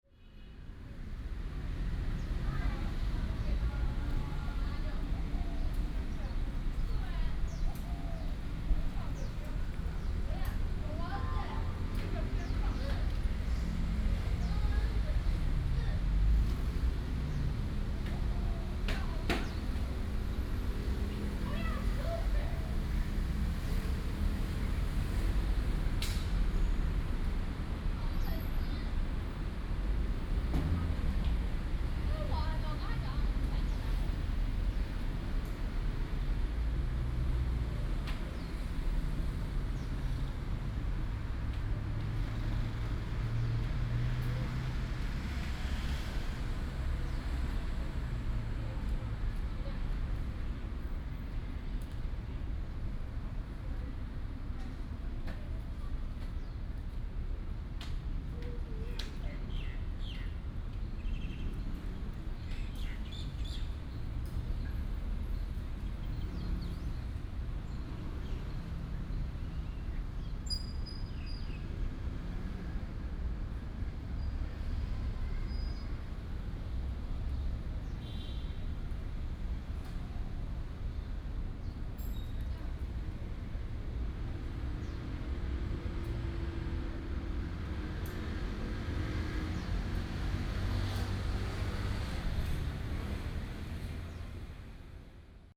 in the Park
Please turn up the volume a little. Binaural recordings, Sony PCM D100+ Soundman OKM II
1 August 2015, 17:21